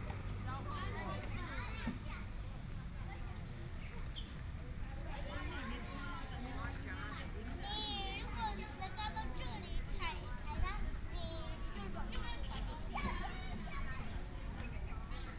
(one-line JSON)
{"title": "中琉紀念公園, Hualien City - in the Park", "date": "2014-08-27 16:41:00", "description": "Birdsong, in the Park, The weather is very hot, Children and the elderly\nBinaural recordings", "latitude": "24.00", "longitude": "121.60", "altitude": "20", "timezone": "Asia/Taipei"}